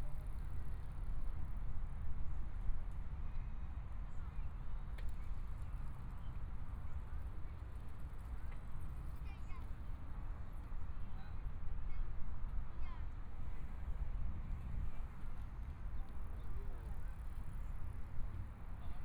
大佳河濱公園, Taipei City - walking in the Riverside Park
Riverside Park, The distant sound of aircraft taking off, Holiday, Sunny mild weather
Please turn up the volume
Binaural recordings, Zoom H4n+ Soundman OKM II